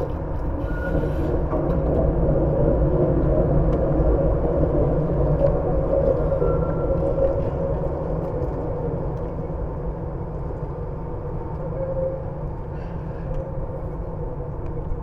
Tallinn, Baltijaam railside fence - Tallinn, Baltijaam railside fence (recorded w/ kessu karu)
hidden sounds, resonance inside two sections of a metal fence along tracks at Tallinns main train station